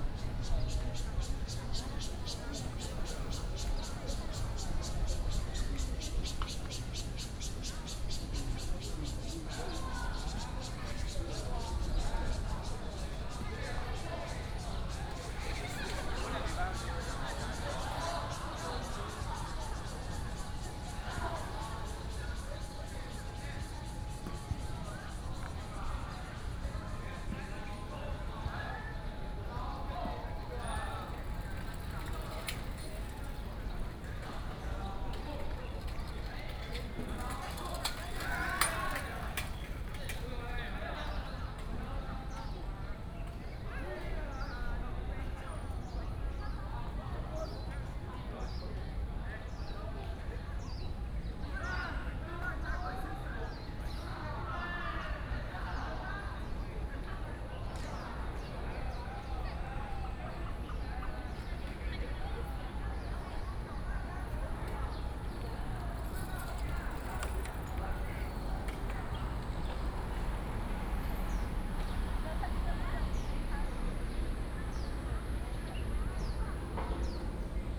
{
  "title": "臺灣大學, Taipei City - Walking in the university",
  "date": "2015-06-28 17:19:00",
  "description": "Walking in the university, Holiday Many tourists, Very hot weather",
  "latitude": "25.02",
  "longitude": "121.54",
  "altitude": "16",
  "timezone": "Asia/Taipei"
}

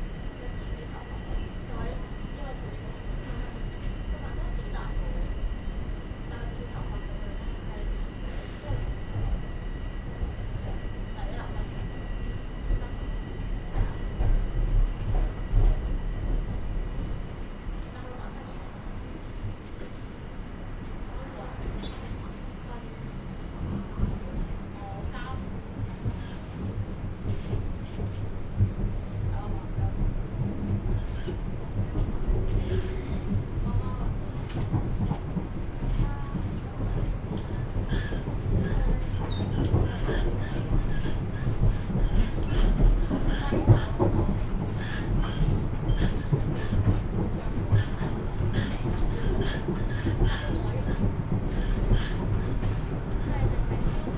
{
  "title": "North of Glencairn station - Echoing announcement of delays on TTC subway",
  "date": "2021-11-02 14:20:00",
  "description": "Driver's voice echoes as he announces delays on Toronto subway line.",
  "latitude": "43.71",
  "longitude": "-79.44",
  "altitude": "177",
  "timezone": "America/Toronto"
}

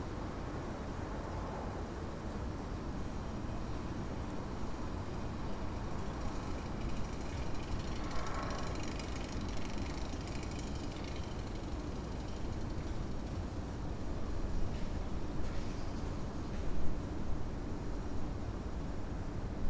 {
  "title": "berlin: friedelstraße - the city, the country & me: night traffic",
  "date": "2012-01-12 01:38:00",
  "description": "cyclists, passers by and the traffic sound of kottbusser damm in the distance\nthe city, the country & me: january 12, 2012",
  "latitude": "52.49",
  "longitude": "13.43",
  "altitude": "46",
  "timezone": "Europe/Berlin"
}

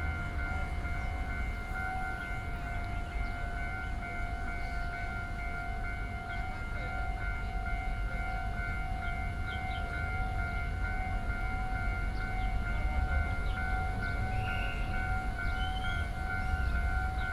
{
  "title": "Wenhua Rd., Yingge Dist., New Taipei City - Railway crossings",
  "date": "2012-06-20 08:02:00",
  "description": "Railway crossings, Traffic Sound, Traveling by train\nSony PCM D50+ Soundman OKM II",
  "latitude": "24.96",
  "longitude": "121.36",
  "altitude": "51",
  "timezone": "Asia/Taipei"
}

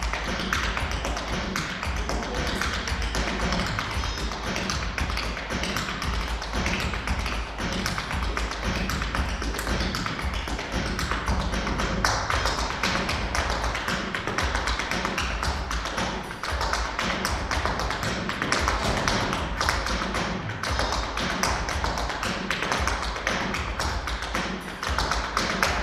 {
  "title": "academy of performig art, stepdancer",
  "date": "2009-11-17 10:02:00",
  "description": "stepdancer rehearsing in the classroom of HAMU",
  "latitude": "50.09",
  "longitude": "14.40",
  "altitude": "212",
  "timezone": "Europe/Prague"
}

